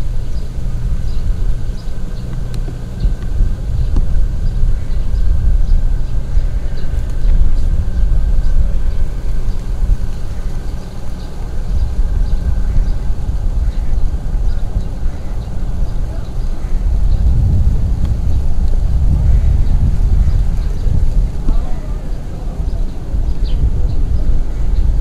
Marina Dorcol, (Dorcol port, atmosphere) Belgrade - Marina Dorcol, Belgrade